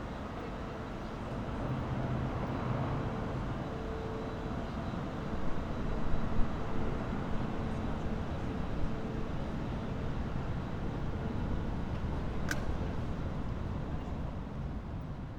Cologne main station, main square night ambience
(Sony PCM D50, internal mics)